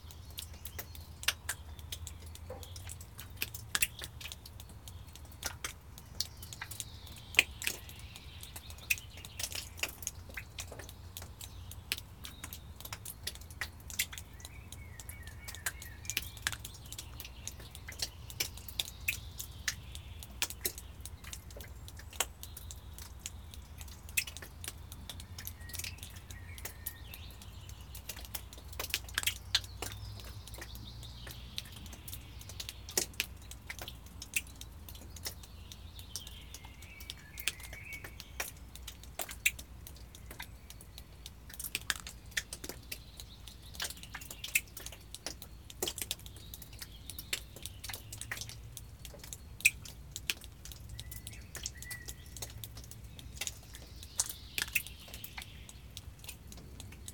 Soviet missile silo Viimsi, water drips
water drips from the roof of a former Soviet bunker in Viimsi near Tallinn
Harjumaa, Estonia, 17 May 2010, 21:04